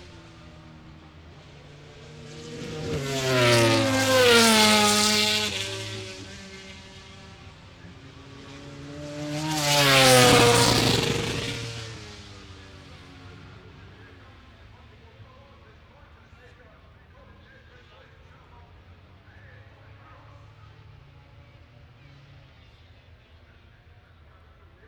{"title": "Unnamed Road, Derby, UK - british motorcycle grand prix 2005 ... motogp qualifying ...", "date": "2005-08-23 14:00:00", "description": "british motorcycle grand prix 2005 ... motogp qualifying ... one point stereo mic ... audio technica ... to minidisk ...", "latitude": "52.83", "longitude": "-1.37", "altitude": "81", "timezone": "Europe/London"}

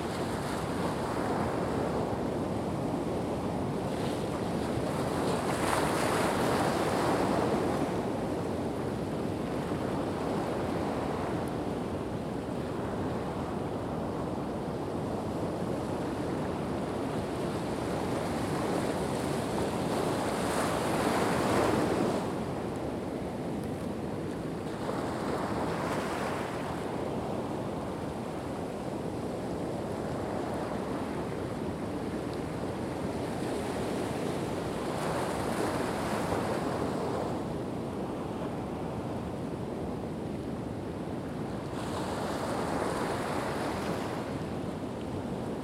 {"title": "Av. Alto da Vela, Silveira, Portugal - Formosa", "date": "2020-11-02 20:16:00", "latitude": "39.13", "longitude": "-9.39", "altitude": "6", "timezone": "Europe/Lisbon"}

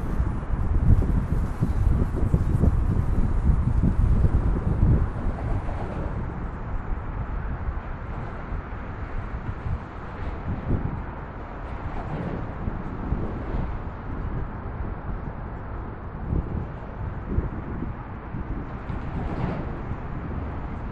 {"title": "Manhattan Bridge, New York, NY, USA - Manhattan Bridge Walk", "date": "2010-11-28 12:00:00", "latitude": "40.71", "longitude": "-73.99", "altitude": "8", "timezone": "America/New_York"}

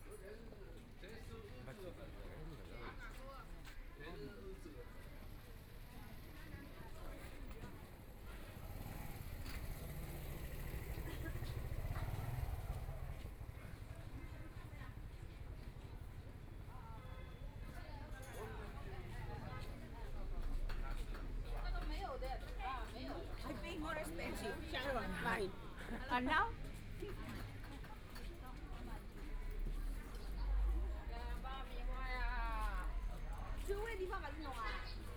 {"title": "Liu He Kou Rd., Shanghai - Antiques Market", "date": "2013-12-01 12:24:00", "description": "Walking in the Antiques Market, Binaural recordings, Zoom H6+ Soundman OKM II", "latitude": "31.22", "longitude": "121.48", "altitude": "12", "timezone": "Asia/Shanghai"}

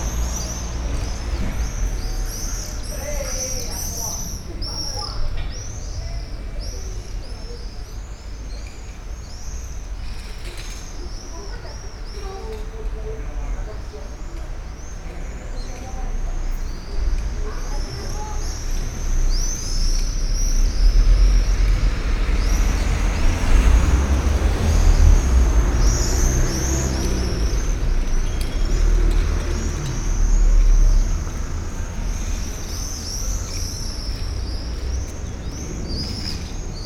{"date": "2011-07-05 10:55:00", "description": "Montluel, Impasse du Moulin, the bells from Notre-Dame-des-Marais", "latitude": "45.85", "longitude": "5.06", "altitude": "206", "timezone": "Europe/Paris"}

